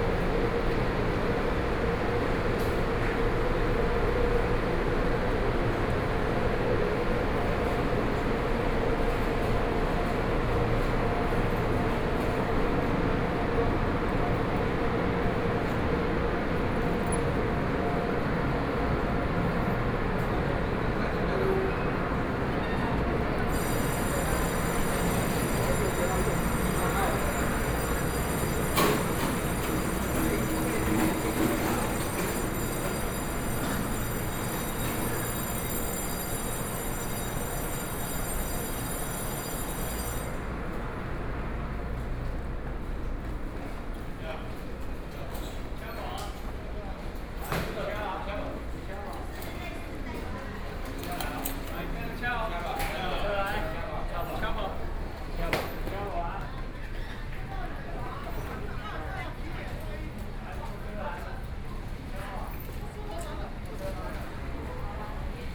Changhua Station - Taxi drivers
From out of the station platform, Taxi drivers at the outlet to attract guests, Train travel from station, Zoom H4n+ Soundman OKM II